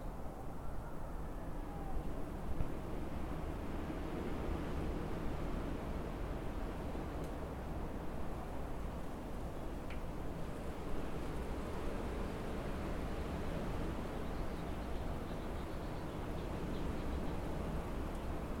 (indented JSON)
{
  "title": "White Rock Cr. Boulder Colorado 80301: Habitat Community Park - Windy Afternoon & cat",
  "date": "2013-02-01 14:00:00",
  "description": "6070 White Rock Cr. Boulder Colorado 80301: Habitat Community Park: February 1st 2:00pm. With my cat following me.",
  "latitude": "40.06",
  "longitude": "-105.21",
  "altitude": "1591",
  "timezone": "America/Denver"
}